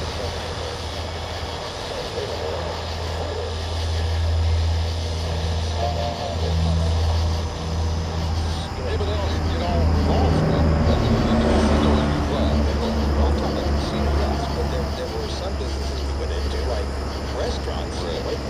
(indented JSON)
{
  "title": "W Arthur Hart St, Fayetteville, AR, USA - Late-night AM and Open Window (WLD2018)",
  "date": "2018-07-18 23:49:00",
  "description": "A brief survey of the AM band with the bedroom window open in Fayetteville, Arkansas. A GE clock radio (Model No. 7-4612A) is tuned from 540 to 1600 kHz. Also traffic from Highway 71/Interstate 49, about 200 feet away, and cicadas. For World Listening Day 2018. Recorded via Olympus LS-10 with built-in stereo mics.",
  "latitude": "36.08",
  "longitude": "-94.20",
  "altitude": "374",
  "timezone": "America/Chicago"
}